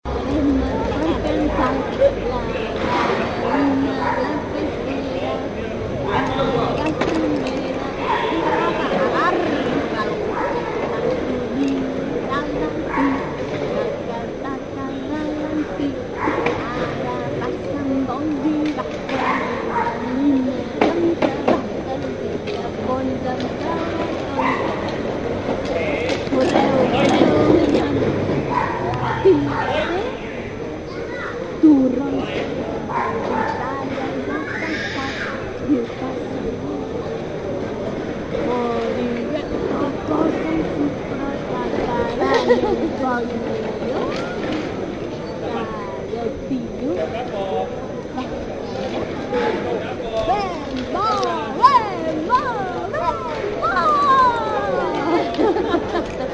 A young mother singing to his son in a little square of the neighbourhood of Gracia. 2008/12/13.12:54 a.m.
Barcelona, Spain